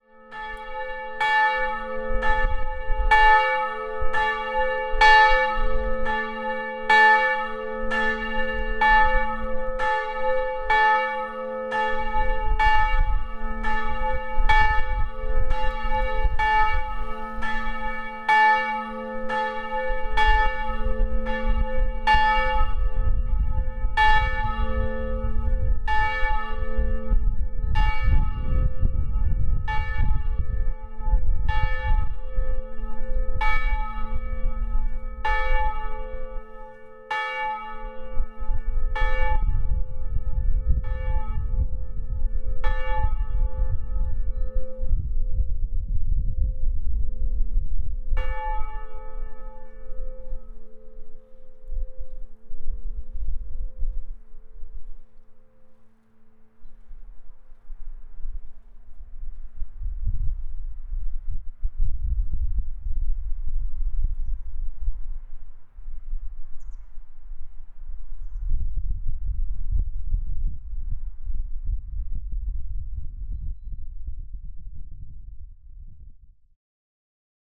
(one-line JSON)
{"title": "Place de lÉglise, Nasbinals, Frankrijk - Church bells and heavy October winds", "date": "2015-10-10 17:00:00", "description": "In october 2015, I was walking for from Le Puy en Velay to Conques. Taking a break for one day, I start recording some sounds in Nasbinals. The first October cold and rough winds held me mainly inside. (Recorded with ZOOM 4HN)", "latitude": "44.66", "longitude": "3.05", "altitude": "1180", "timezone": "Europe/Paris"}